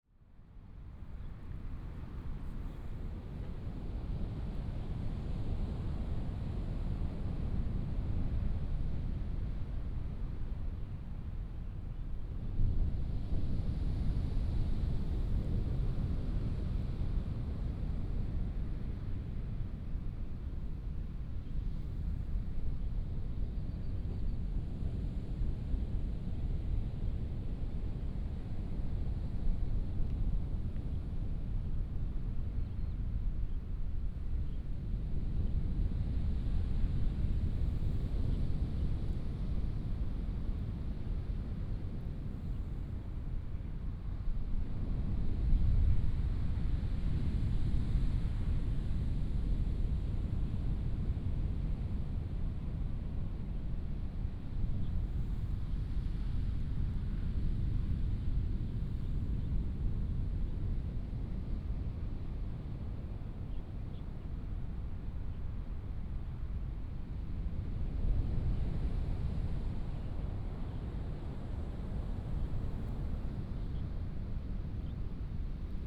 Jiuxianglan, Taimali Township 台東縣 - on the beach
on the beach, Sound of the waves
Taitung County, Taiwan, April 2018